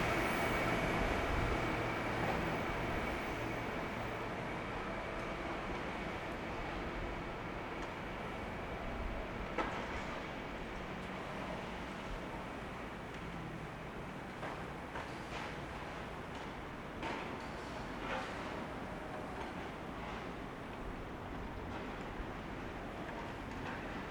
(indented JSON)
{
  "title": "Kaohsiung Station - Train traveling through",
  "date": "2012-03-29 17:05:00",
  "description": "Train traveling through, Sony ECM-MS907, Sony Hi-MD MZ-RH1",
  "latitude": "22.64",
  "longitude": "120.30",
  "altitude": "5",
  "timezone": "Asia/Taipei"
}